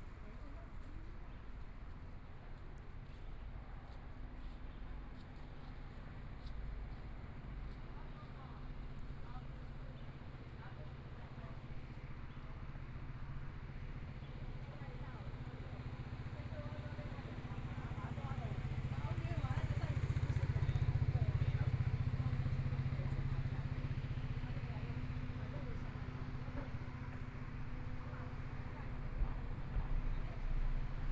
Liaoning St., Taipei City - In the Street
walking In the Street, Traffic Sound, Motorcycle Sound, Pedestrians on the road, Birds singing, Binaural recordings, Zoom H4n+ Soundman OKM II